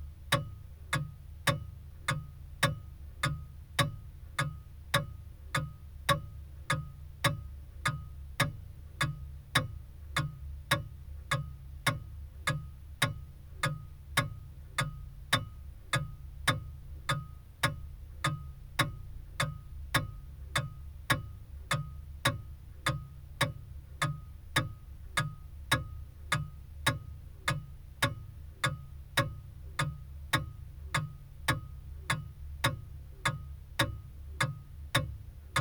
Meadow Way, Didcot, UK - pendulum wall clock ...
pendulum wall clock ... olympus ls 14 integral mics ... inside the casing with the door shut ... the clock is possibly 100+ years old ... recorded on possibly my last visit to the house ...
England, United Kingdom, 7 May 2021